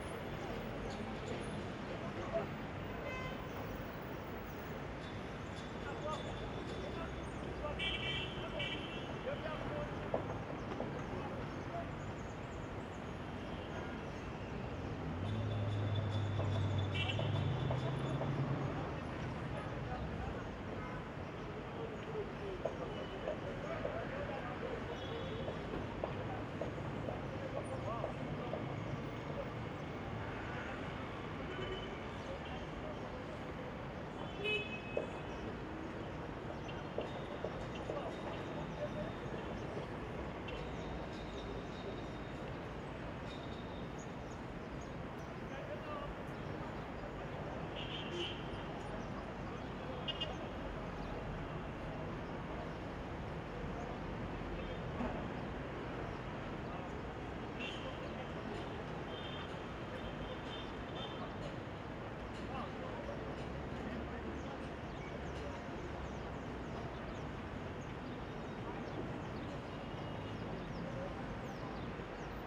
Dakar, Senegal - Stadtambi, Mittag
Aus dem 8. Stockwerk. Im Hintergrund: Muezzin.
24 May 2004